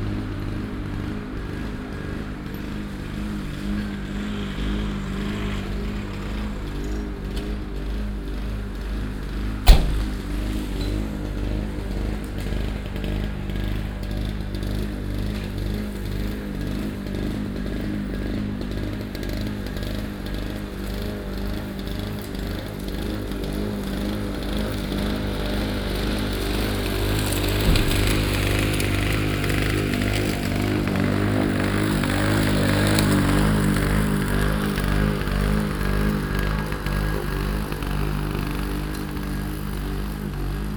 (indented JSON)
{
  "title": "cologne, oberlaender wall, friedenspark, rasen mähen",
  "date": "2008-06-20 14:22:00",
  "description": "städtische parkpflegearbeiten, vormittags\nsoundmap nrw:\nsocial ambiences/ listen to the people - in & outdoor nearfield recordings",
  "latitude": "50.92",
  "longitude": "6.97",
  "altitude": "52",
  "timezone": "Europe/Berlin"
}